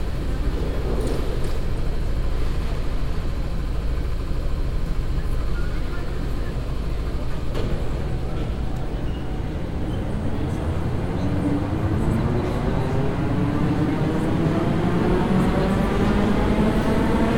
paris, subway station, nation
dense traffic in the afternoon, a train arrives
cityscapes international: socail ambiences and topographic field recordings
Paris, France